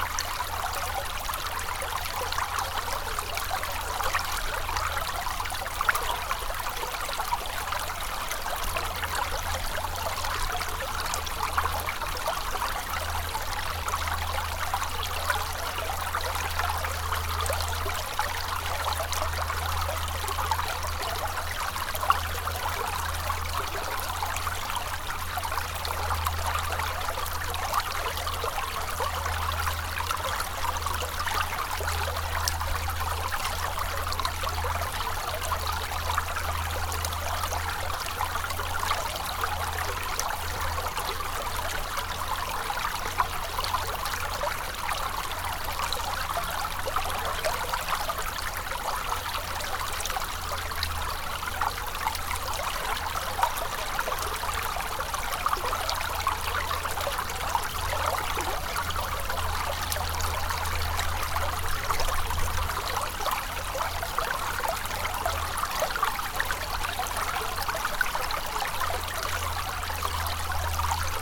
{"title": "Genappe, Belgique - The ry d'Hez river", "date": "2016-02-19 13:00:00", "description": "A small river into the woods, in a very beautiful and bucolic place.", "latitude": "50.60", "longitude": "4.52", "altitude": "100", "timezone": "Europe/Brussels"}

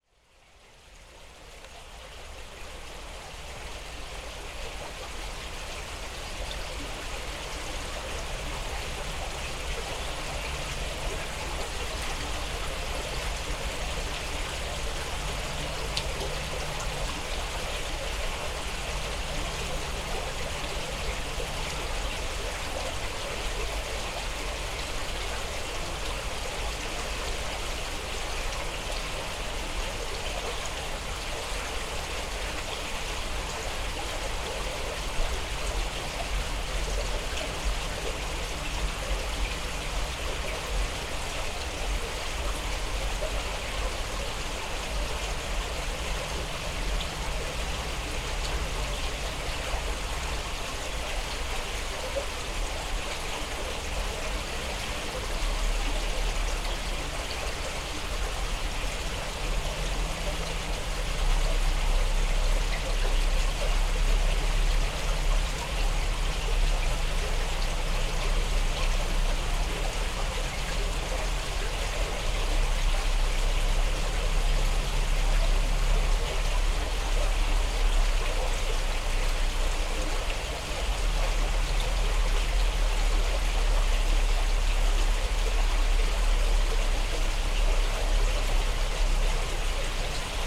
{"title": "Utena, Lithuania, aspects of stream", "date": "2018-09-06 20:10:00", "description": "stream under the road. two records in one. first part: soundscape, the second: contact mics on metallic support", "latitude": "55.52", "longitude": "25.58", "altitude": "96", "timezone": "Europe/Vilnius"}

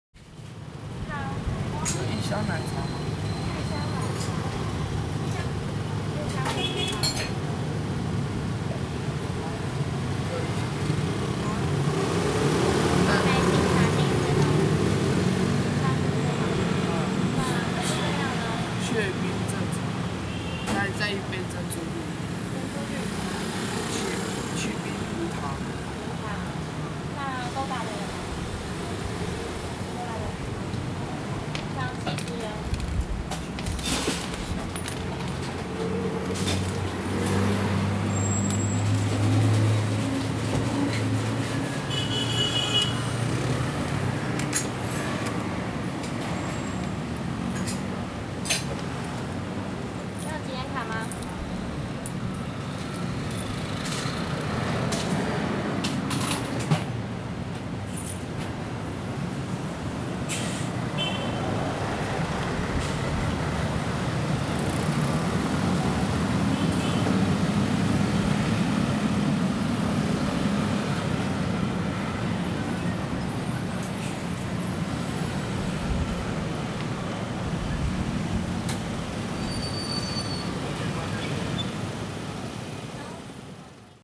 {"title": "Sec., Xiulang Rd., Yonghe Dist., New Taipei City - At the counter", "date": "2011-05-06 21:55:00", "description": "At the counter, Beverage stores\nZoom H4n", "latitude": "25.00", "longitude": "121.52", "altitude": "24", "timezone": "Asia/Taipei"}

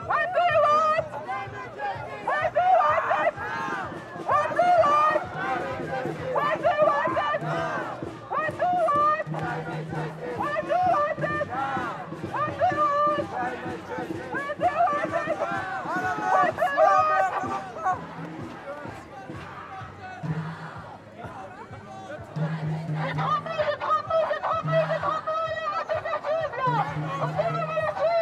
{"title": "Boulevard Roi Albert II, Bruxelles, Belgique - Demonstration of young people for climate justice", "date": "2019-02-28 11:00:00", "description": "Tech Note : Olympus LS5 internal microphones.", "latitude": "50.86", "longitude": "4.36", "altitude": "15", "timezone": "Europe/Brussels"}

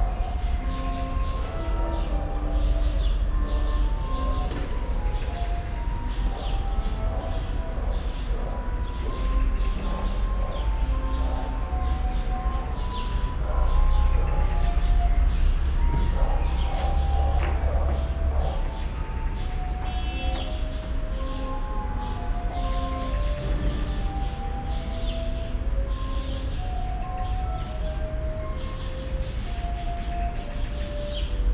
{"title": "Bethanien, Kreuzberg, Berlin - flute excercises", "date": "2014-05-26 17:00:00", "description": "flute excercises, heard on a balcony in a backyard of Bethanien, Berlin.\n(iphone 4s, tacam IXJ2, Primo EM172)", "latitude": "52.50", "longitude": "13.42", "altitude": "39", "timezone": "Europe/Berlin"}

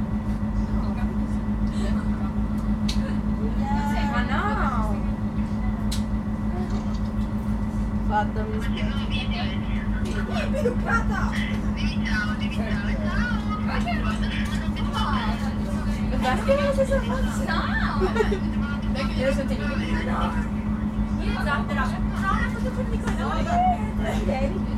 {"title": "schio stazione r 5452", "date": "2009-10-24 12:55:00", "description": "treno regionale 5452", "latitude": "45.71", "longitude": "11.36", "timezone": "Europe/Rome"}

